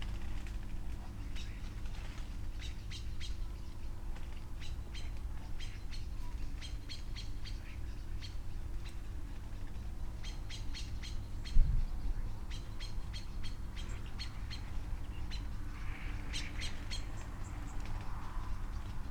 Post Box, Malton, UK - Blackbird dusk ...
Blackbird dusk ... SASS ... bird calls from ... house sparrow ... robin ... tawny owl ... starling ... plenty of traffic noise ...